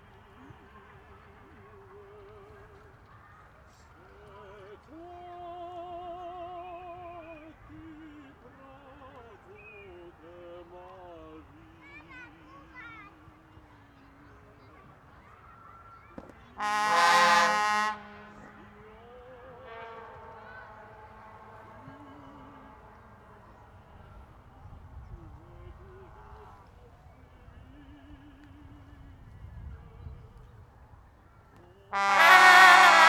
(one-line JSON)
{"title": "Tempelhofer Feld, Berlin, Deutschland - sonic places performance", "date": "2012-08-18 15:30:00", "description": "performance during the Berlin sonic places event: Tempeltofu, by Tomomi Adachi, composition for voices, vuvuzelas, bicycles and trombones.\n(Sony PCM D50)", "latitude": "52.48", "longitude": "13.41", "altitude": "44", "timezone": "Europe/Berlin"}